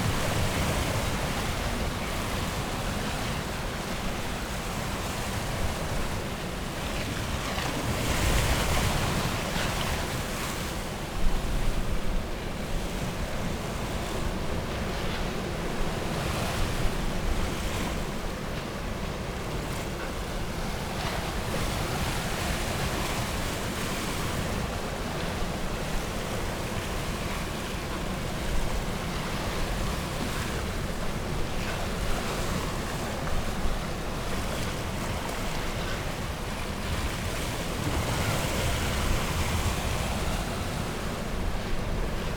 East Lighthouse, Battery Parade, Whitby, UK - east pier ... outgoing tide ...
east pier ... outgoing tide ... lavalier mics on T bar on fishing landing net pole ... over the side of the pier ...
8 March